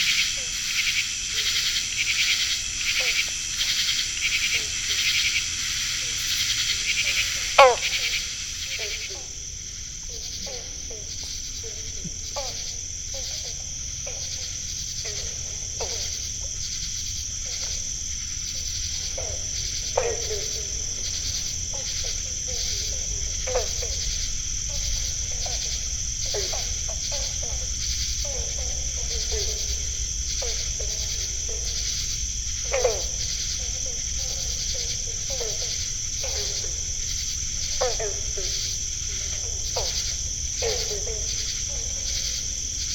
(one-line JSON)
{"title": "Downe, NJ, USA - Summer chorus compilation", "date": "2016-07-30 22:00:00", "description": "Cicadas, katydids and green frogs highlight this one-evening compilation of field recordings collected at a wildlife management area.", "latitude": "39.32", "longitude": "-75.07", "altitude": "25", "timezone": "America/New_York"}